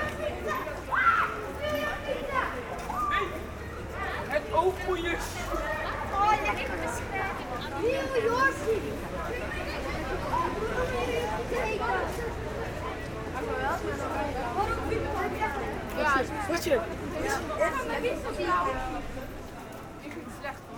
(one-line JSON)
{"title": "Amsterdam, Nederlands - Children talking", "date": "2019-03-28 11:30:00", "description": "Children talking loudly into one of the main touristic avenue of Amsterdam. I follow them walking quickly.", "latitude": "52.37", "longitude": "4.90", "altitude": "4", "timezone": "Europe/Amsterdam"}